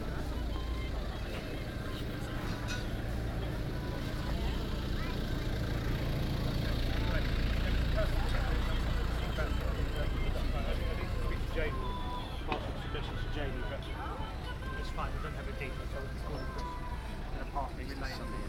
Folkestone, Regno Unito - GG Folkestone-Harbour-C 190524-h14-20
Total time about 36 min: recording divided in 4 sections: A, B, C, D. Here is the third: C.
UK